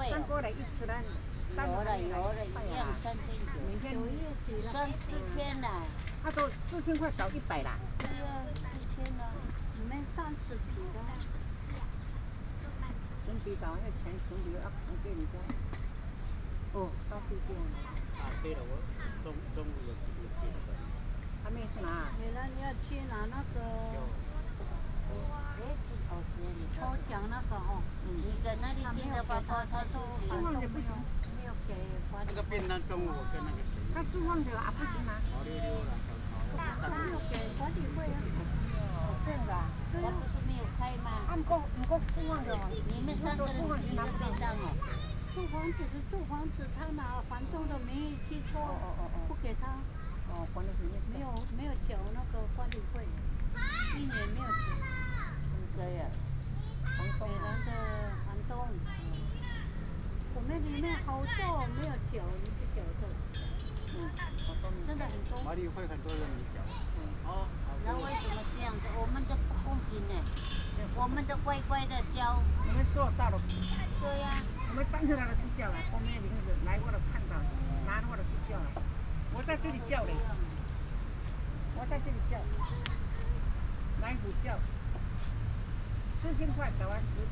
空軍十九村, Hsinchu City - in the park
in the park, Childrens play area, Several elderly people are chatting, Binaural recordings, Sony PCM D100+ Soundman OKM II
19 September 2017, 17:53